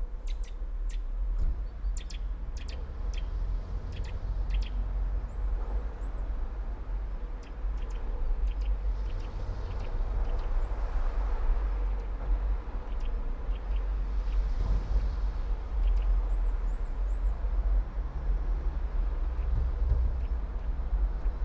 all the mornings of the ... - feb 12 2013 tue